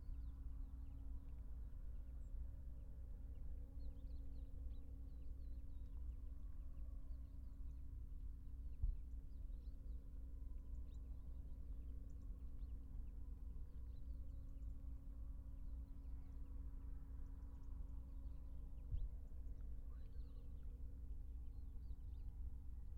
On the eastern shore of the Etang des Vaccarès at noon. In between various sounds of cars and motorbikes passing on the gravel road behind, sounds of waves and calls of the distant flamingoes can be heard. Binaural recording. Artificial head microphone set up on some rocks on the shore, about 3 meters away from the waterline. Microphone facing west. Recorded with a Sound Devices 702 field recorder and a modified Crown - SASS setup incorporating two Sennheiser mkh 20 microphones.
France métropolitaine, France